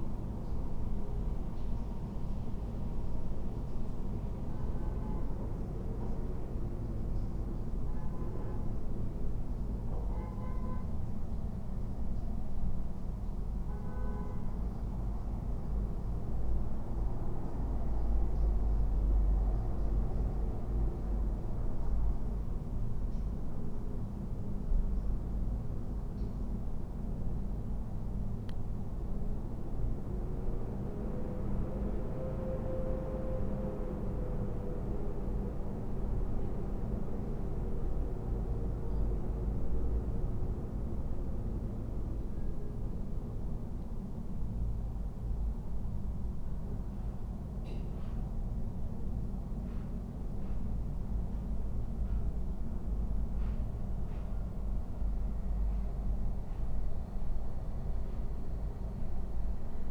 doors, Karl Liebknecht Straße, Berlin, Germany - wind through front door crevice, inside and outside merge
softened sounds of the city, apartment building and a room
Sonopoetic paths Berlin
Berlin, Deutschland, European Union